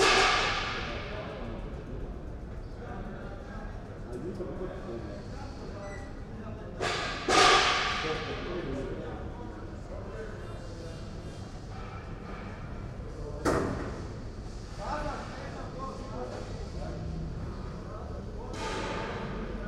Maribor, Slovenia - workers in the courtyard
in a quiet courtyard behind maribor's main square, workers install platforms and seating inside a large tent